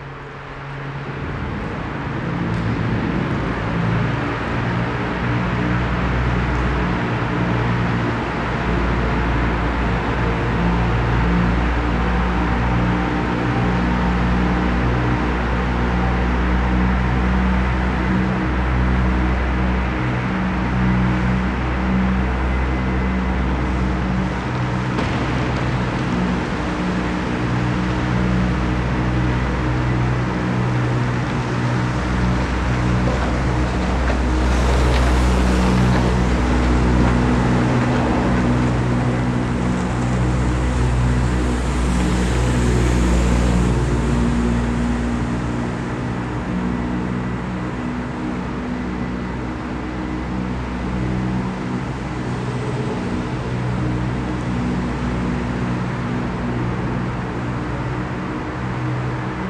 Düsseltal, Düsseldorf, Deutschland - Düsseldorf. Ice Stadium, Ice machine
Inside the old Ice Stadium of Duesseldorf. The sound of the ice machine driving on the ice cleaning the ice surface. In the background the street traffic from the nearby street.
This recording is part of the exhibition project - sonic states
soundmap nrw -topographic field recordings, social ambiences and art places